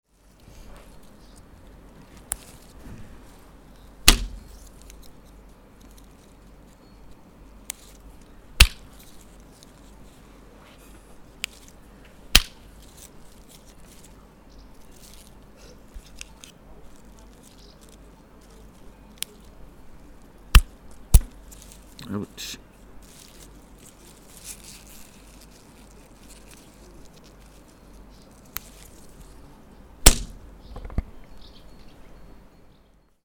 Klöpfblumen auf Handrücken, typische Alpblume
Chlöpfblueme zum verchlöpfe uf dr Alp im Wallis.